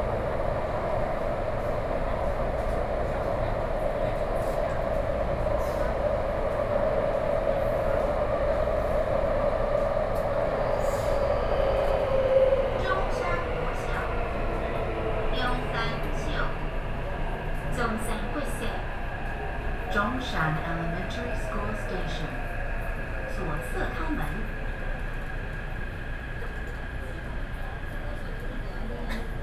Orange Line (Taipei Metro) - Mother and child
from Zhongshan Elementary School Station to Zhongxiao Xinsheng Station, Sony PCM D50 + Soundman OKM II
信義區, 台北市 (Taipei City), 中華民國, July 2013